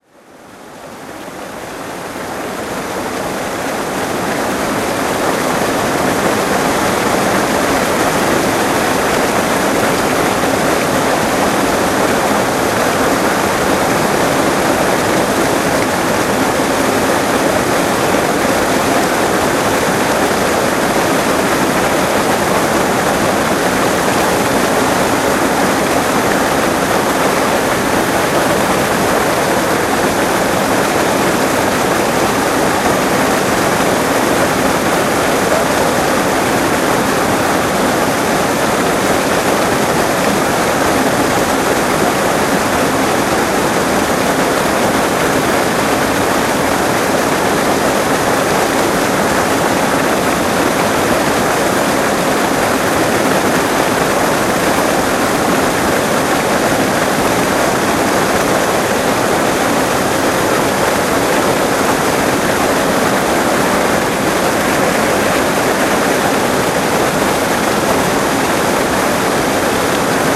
Vernet Les Bains - Cascade des Anglais.
Minidisc recording from 2000.